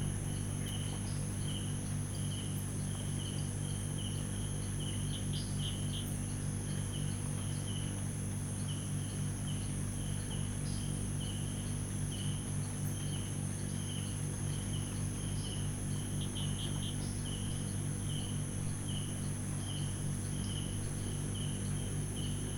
Khrongkan Thanon Nai Mu Ban Mai Ngae Rd, Tambon Chong Kham, Amphoe Mueang Mae Hong Son, Chang Wat Ma - Atmo Resort Mae Hong So
Morning atmosphere in the woods near Mae Hong Son. Not much happening.